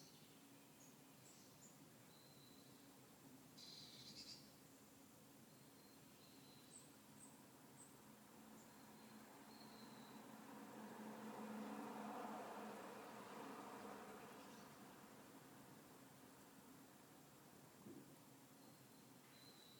Wiesbaden, Dotzheimer Str. - 2. HH
Wiesbaden, Germany